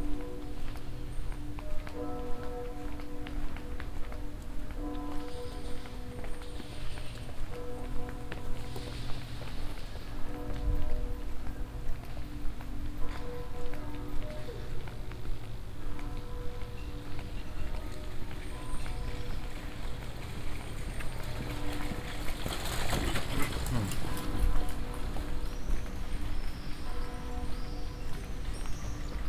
walking in the morning time along the keizergracht channel in the direction of the church morning bells
international city scapes - social ambiences and topographic field recordings